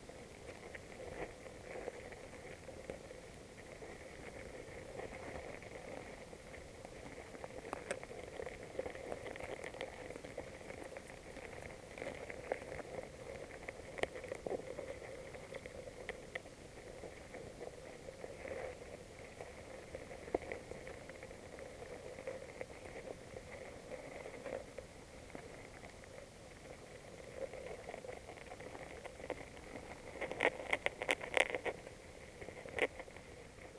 Poncey-sur-l'Ignon, France - Seine spring and gammarids
This is the Seine river spring. The river is 777,6 km long. I walked along it during one year and 3 month, I Went everywhere on it. This recording is the first centimeter of the river. It's the Seine spring. In the streamlet, there's a lot of gammarids rummaging into the water, the ground and the small algae. This is the precious sound of the spring.
29 July 2017, 14:30